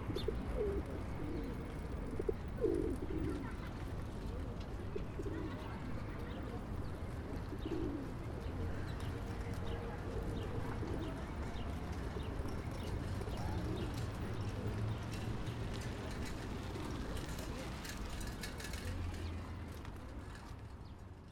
{"title": "Площадь Тургенева, Санкт-Петербург, Россия - Turgenev Square", "date": "2019-04-06 15:00:00", "latitude": "59.92", "longitude": "30.29", "altitude": "13", "timezone": "Europe/Moscow"}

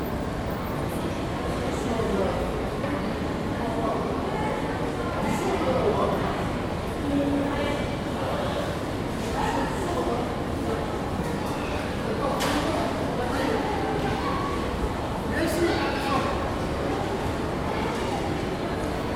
{"title": "Metz-Centre – Ancienne Ville, Metz, France - mall center saint in Metz", "date": "2013-06-06 17:33:00", "latitude": "49.12", "longitude": "6.18", "altitude": "181", "timezone": "Europe/Paris"}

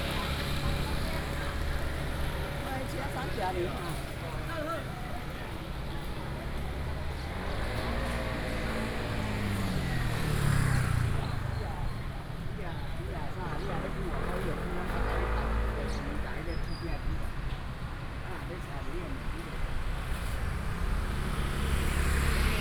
{"title": "成功路, Xuejia Dist., Tainan City - Traditional market block", "date": "2019-05-15 08:43:00", "description": "Traditional market block, traffic sound", "latitude": "23.23", "longitude": "120.18", "altitude": "7", "timezone": "Asia/Taipei"}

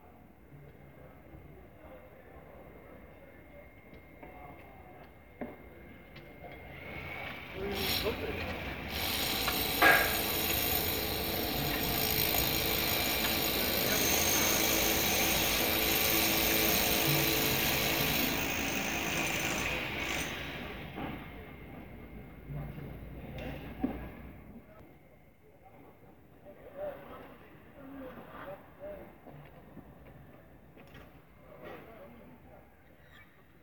{"title": "Constitution Rd, Dulwich Hill NSW, Australia - Building site", "date": "2017-09-22 13:00:00", "description": "Jackhammers & workers talking", "latitude": "-33.90", "longitude": "151.14", "altitude": "23", "timezone": "Australia/Sydney"}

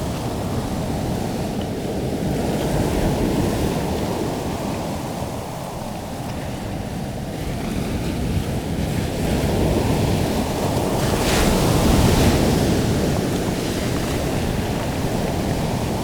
{"title": "The Fairway, Amble, Morpeth, UK - High tide ... rising sun ...", "date": "2017-09-22 05:45:00", "description": "High tide ... rising sun ... Amble ... open lavalier mics on T bar clipped to mini tripod ... sat in the shingle ... watching the sun come up ... and a high tide roost of sanderlings ...", "latitude": "55.33", "longitude": "-1.56", "altitude": "2", "timezone": "Europe/London"}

